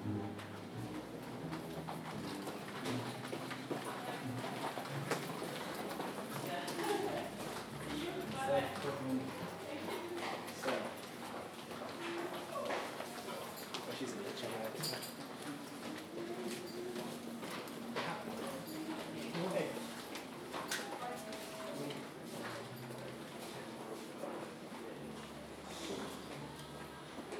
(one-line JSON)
{"title": "Ton de Leeuwstraat, Amsterdam, Netherlands - conservatorium Amsterdam", "date": "2018-05-23 09:42:00", "description": "recorded and created by Marike Van Dijk", "latitude": "52.38", "longitude": "4.91", "timezone": "Europe/Amsterdam"}